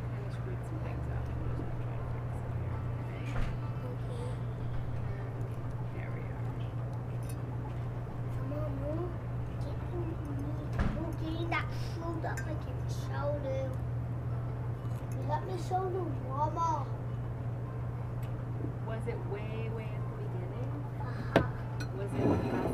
wow. a busy noontime at everyones favorite cafe: CAFE FINA. blanca rests out in the parking lot as chinqi really nails this one.